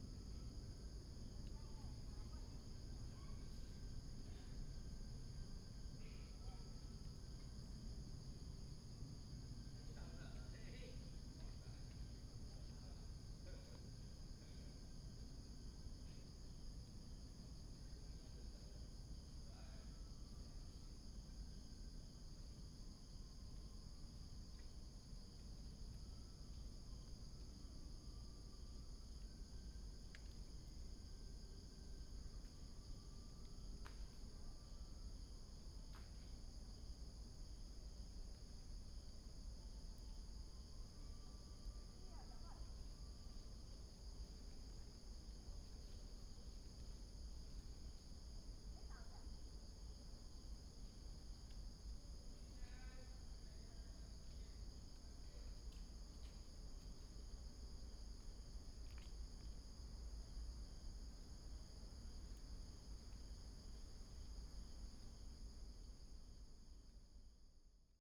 十八尖山, Hsinchu City - Early in the park
Early in the park, Insects sound, sound of the plane, Binaural recordings, Sony PCM D100+ Soundman OKM II